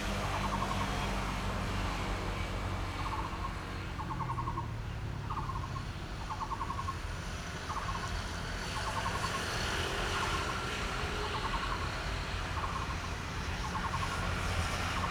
Zuoying, Kaohsiung - bird and Traffic
In the park, bird and Traffic Noise, Rode NT4+Zoom H4n